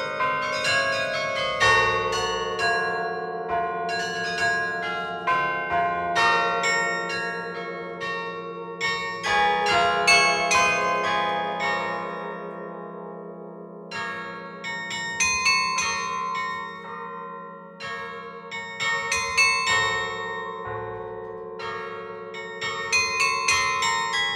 Carillon de l'abbatiale de St-Amand-les-Eaux - Abbatiale de St-Amand-les-Eaux
Abbatiale de St-Amand-les-Eaux
Carillon "The Final Countdown"
Maître carillonneur : Charles Dairay
Hauts-de-France, France métropolitaine, France